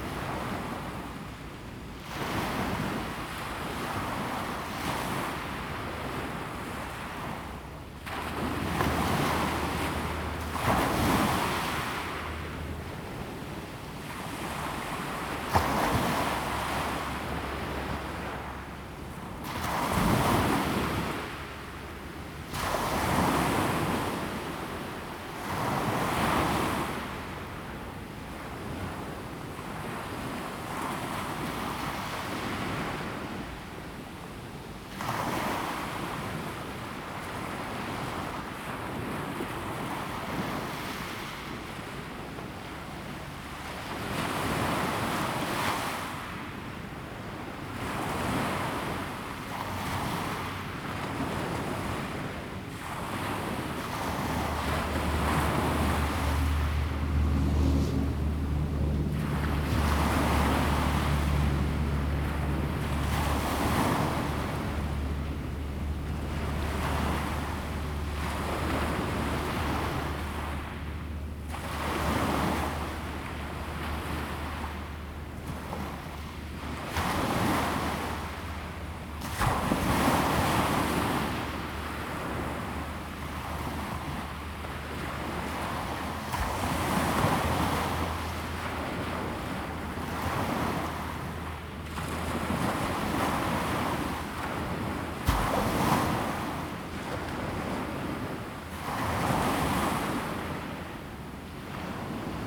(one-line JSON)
{"title": "台1線, Fangshan Township, Pingtung County - Wave crash", "date": "2018-04-24 10:19:00", "description": "On the beach, traffic sound, Sound of the waves, Wave crash\nZoom H2N MS+ XY", "latitude": "22.24", "longitude": "120.67", "altitude": "2", "timezone": "Asia/Taipei"}